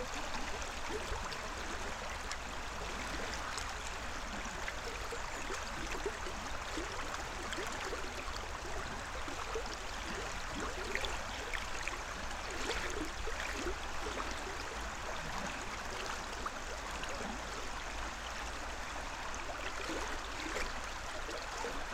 outside te borders of the town, at the river
Vilniaus miesto savivaldybė, Vilniaus apskritis, Lietuva, 19 October 2019, ~14:00